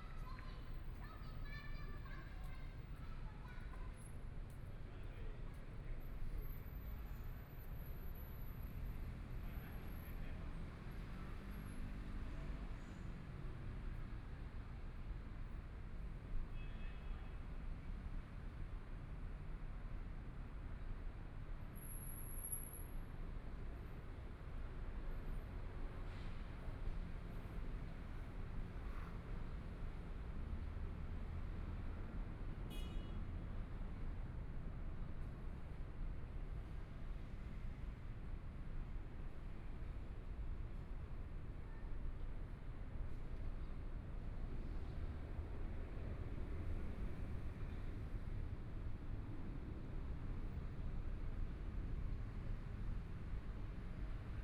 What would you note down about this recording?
in the Park, Traffic Sound, Motorcycle Sound, Pedestrians on the road, Birds singing, Binaural recordings, Zoom H4n+ Soundman OKM II